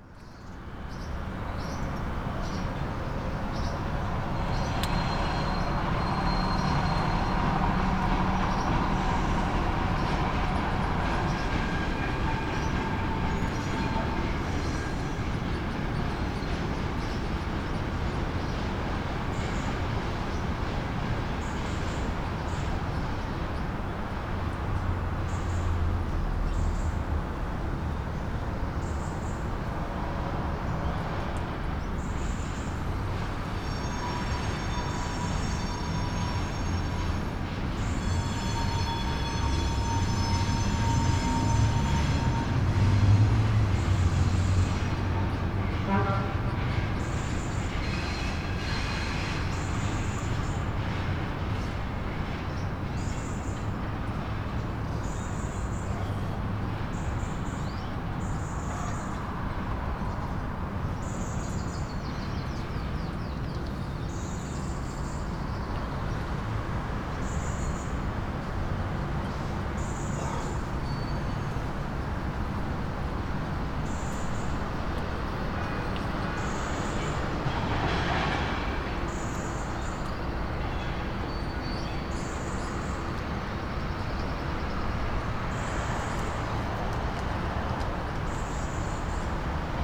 caged birds, train passing ... heavy traffic soundscape
Trieste, Italy